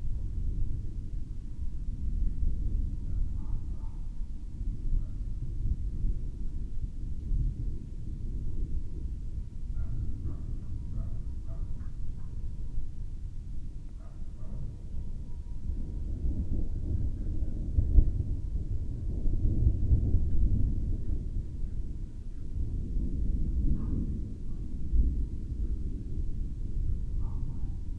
Chapel Fields, Helperthorpe, Malton, UK - moving away thunderstorm ...
moving away thunderstorm ... xlr SASS on tripod to Zoom F6 ... dogs ... ducks ... voices in the background ...
26 June 2020